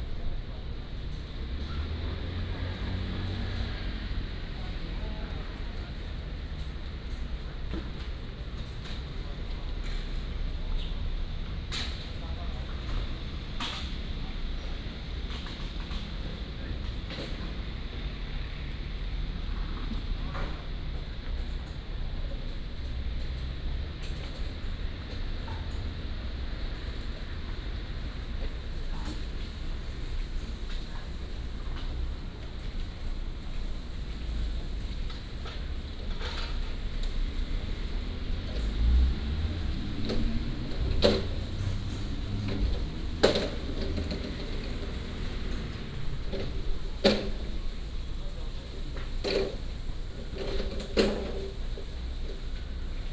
群賢公園, Da'an District - in the Park
in the Park